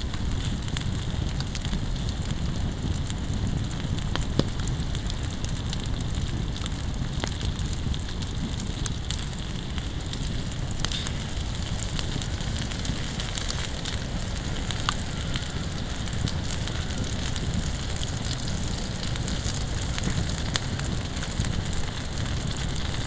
Kolonia Mazurska, Mierki, Poland - (853 AB) Fireplace in the woods
Stereo recording of a fireplace with some grill and food on it (thus the water hisses). In the background, there is a chance for some horse sounds from a barn.
Recorded with a pair of Sennheiser MKH 8020, 17cm AB, on Sound Devices MixPre-6 II.